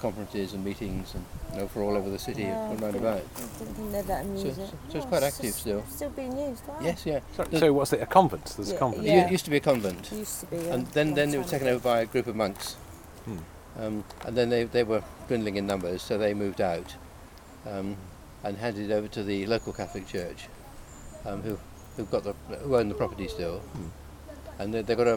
Walk Three: Convent and Catholic Church